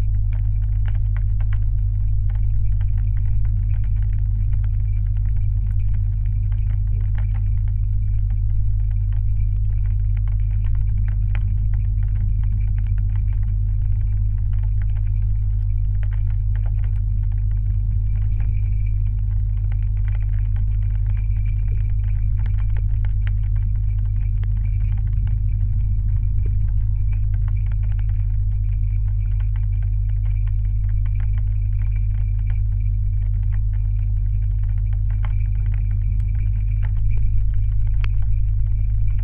Užpaliai, Lithuania, watertower
metallic waterrower, still working. geophone and contact microphones
Utenos apskritis, Lietuva, January 2021